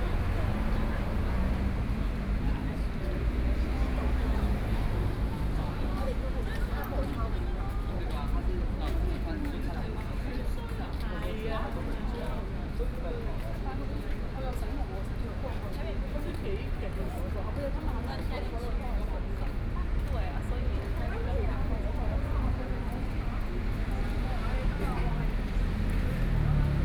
Songshan Cultural and Creative Park, Taipei City - Sitting by the roadside
in the Songshan Cultural and Creative Park Gateway, The traffic on the street with the crowd between, Sony PCM D50 + Soundman OKM II